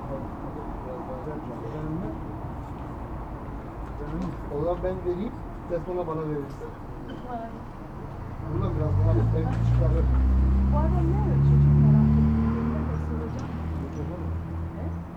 Berlin: Vermessungspunkt Friedelstraße / Maybachufer - Klangvermessung Kreuzkölln ::: 21.08.2011 ::: 02:59
21 August 2011, ~03:00